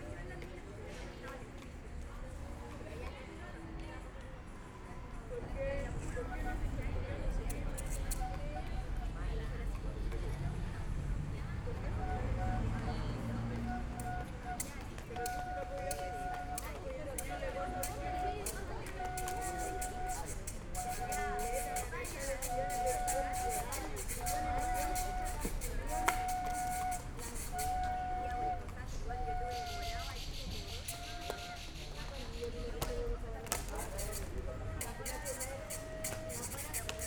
Plaza el Descanso, Valparaíso, Chile - evening ambience
evening ambience at Plaza el Descanso, Valparaíso. At night time, tourists, locals, buskers and jugglers come here to chill and play. The place has its name (descanso means rest, break, recreation) from funeral processions, which used to stop here and have a rest on their way up to the cemetery on the hill
(SD702, DPA4060)
Valparaíso, Región de Valparaíso, Chile, 25 November 2015